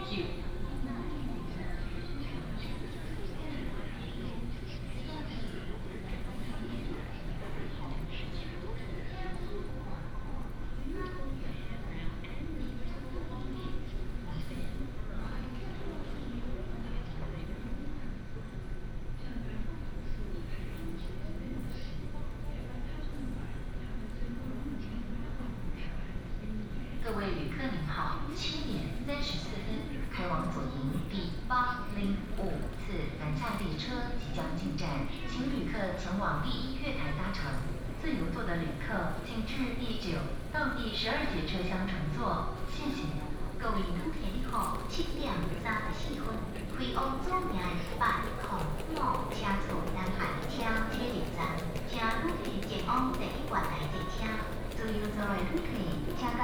桃園高鐵站, Taiwan - In the station hall
In the station hall, Station Message Broadcast, trunk
Binaural recordings, Sony PCM D100+ Soundman OKM II